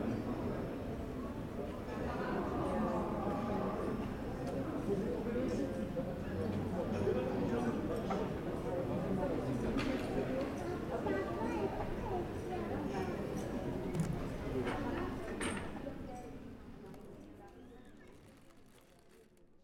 {
  "title": "Rue Ducis, Chambéry, France - Place du Théâtre",
  "date": "2022-10-06 16:50:00",
  "description": "Place du Théâtre Charles Dullin . Ceux qui viennent s'assoir pour boire un pot en terrasse et ceux qui viennent boire l'eau à la fontaine publique.",
  "latitude": "45.57",
  "longitude": "5.92",
  "altitude": "277",
  "timezone": "Europe/Paris"
}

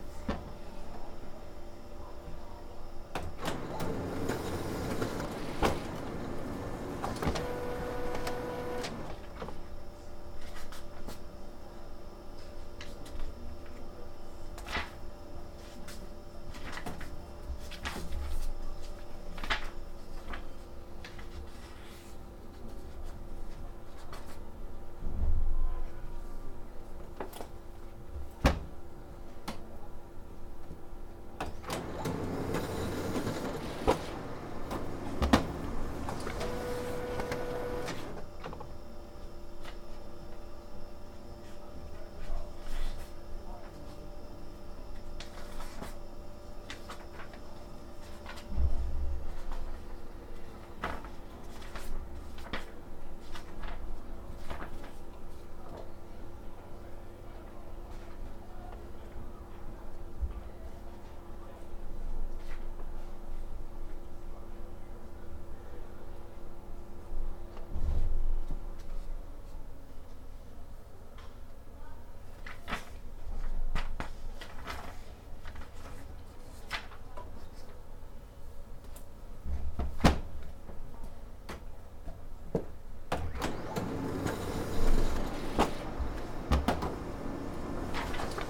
{
  "title": "JHB Building, Oxford Brookes University - Headington Campus, Gipsy Lane, Oxford, Oxfordshire OX3 0BP - Photocopying in the LIbrary",
  "date": "2014-04-01 13:48:00",
  "description": "Photocopying things in the Oxford Brookes Library for a workshop I'm giving in a week's time. I can't actually see the JHB building on the Satellite view as the view seems not to have been updated since the new building work has finished, but I'm pretty sure the sound is in the correct place in relation to the recognisable (and remaining) architectural features of the campus. The new JHB building is all open plan with very high ceilings, so chatter drifts in when there are pauses in the techno rhythms of the photocopier.",
  "latitude": "51.75",
  "longitude": "-1.23",
  "altitude": "102",
  "timezone": "Europe/London"
}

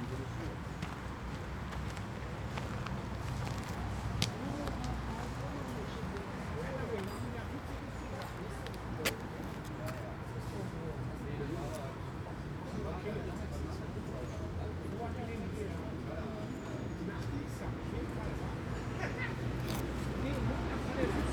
{
  "title": "Berlin-Tempelhof, Berlijn, Duitsland - Community day.",
  "date": "2012-09-11 12:30:00",
  "description": "Community day in front of the church. (Evangel. Kirchengemeinde Neu-Tempelhof)",
  "latitude": "52.48",
  "longitude": "13.38",
  "altitude": "51",
  "timezone": "Europe/Berlin"
}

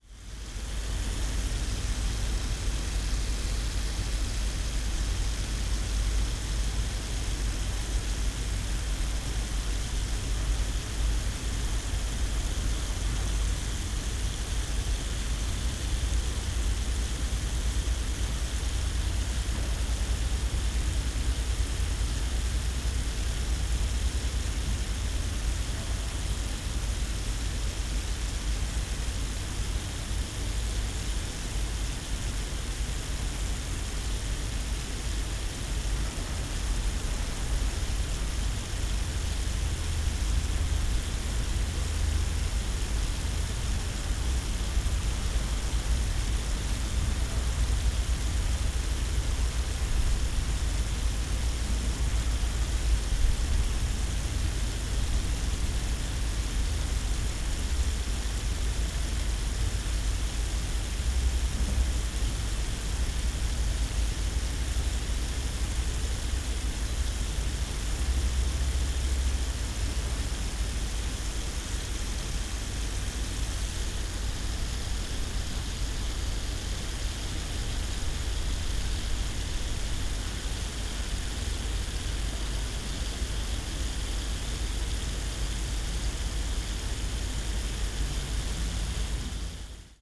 {
  "title": "Tallinn, kalmistupark - fountain",
  "date": "2011-07-05 11:26:00",
  "description": "fountain at kalmistupark, kalamaja, tallin",
  "latitude": "59.45",
  "longitude": "24.73",
  "altitude": "20",
  "timezone": "Europe/Tallinn"
}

